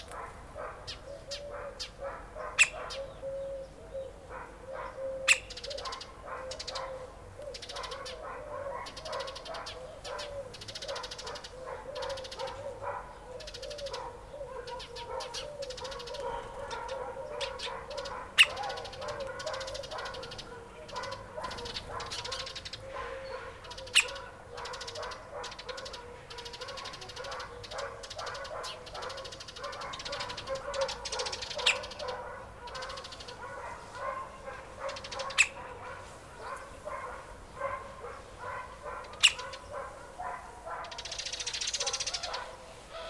Επαρ.Οδ. Φιλώτας - Άρνισσα, Αντίγονος 530 70, Ελλάδα - Birds
Record by: Alexandros Hadjitimotheou